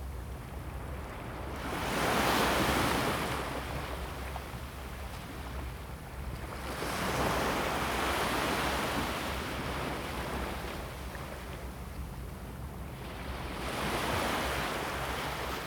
Gangzui, Linyuan Dist., Kaohsiung City - the waves
Sound of the waves, Beach
Zoom H2n MS+XY
Kaohsiung City, Linyuan District, 港嘴堤防201號, 22 November 2016, 11:47